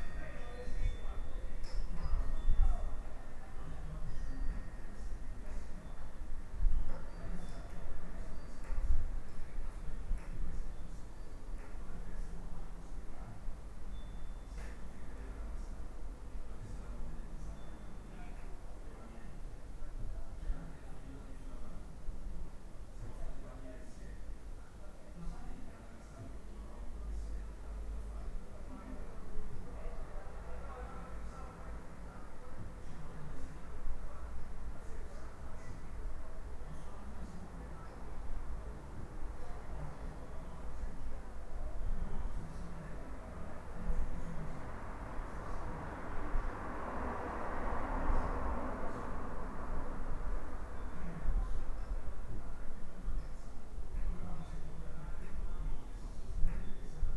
apro la noce - shes sleeping
shes sleeping, open windows, the wind bells ringing...